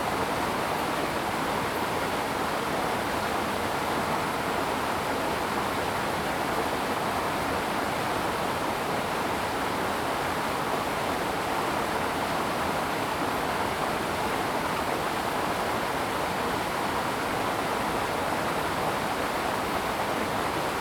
雞母嶺街6-7號, Gongliao Dist., New Taipei City - Stream sound
Stream sound
Zoom H2n MS+XY
Jimuling Street, 6-7號號